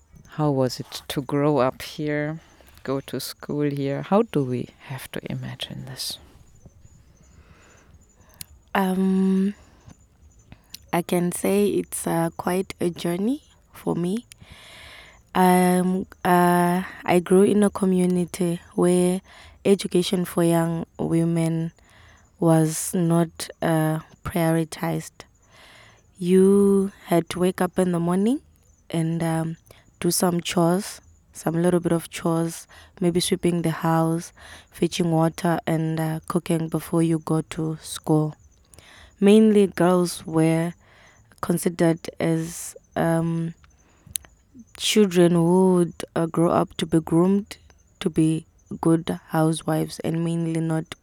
in the grounds of Tusimpe Mission, Binga - ...daily life of a young woman in Binga...
Bulemu works in a local Supermarket and is currently the breadwinner for her family... here she describes for us how we can imagine the life of young women in Binga to look like...
By now Bulemu, is working as a volunteer in a kita in Bielefeld... she is one of 16 young people from the global Souths to participate in a South – North exchange programme at Welthaus Bielefeld in Germany...
Binga, Zimbabwe, 11 November, ~21:00